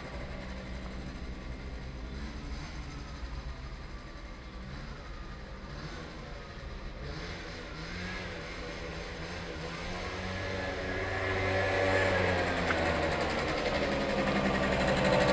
Carrer Mar, 12, 17497 Portbou, Girona, Spagna - PortBou Walk day2
start at former Hotel Francia where Walter Benjamin suicided on September 26th 1940, staircases, Plaça Major, church (closed), station from the entry tunnel, station hall, on railways new and old, market, carre Escultor Mares, Career de La Barca.